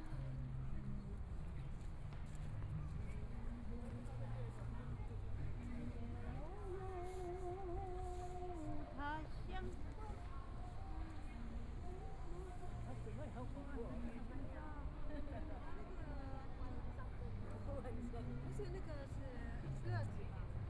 Arts Park - Taipei EXPO Park - Walking through the park
Walking through the park, Environmental sounds, Traffic Sound, Aircraft flying through, Tourist, Clammy cloudy, Binaural recordings, Zoom H4n+ Soundman OKM II